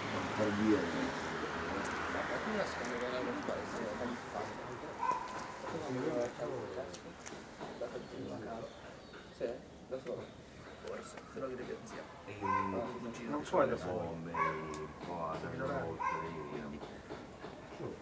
same time as perspective IIA, now close to the entrance of the bar, the same dog an chickens of perspective IIA in the background, lazy people talking on the chairs in the foreground. At some times it could be heard the sound of some kids in the background training football (perspective IIC)